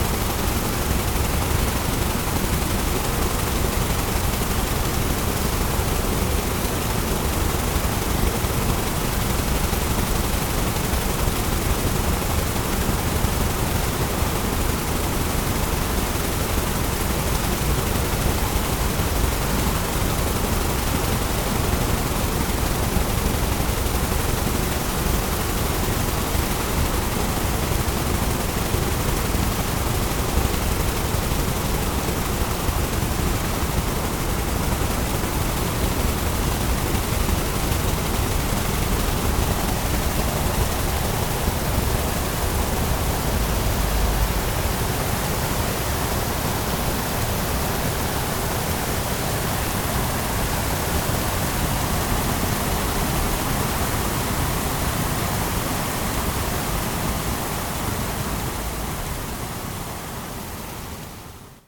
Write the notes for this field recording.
verschiedene wassergeräusche und verkehrsrauschen, etwas windpoppen, am nachmittag, soundmap nrw, project: social ambiences/ listen to the people - in & outdoor nearfield recordings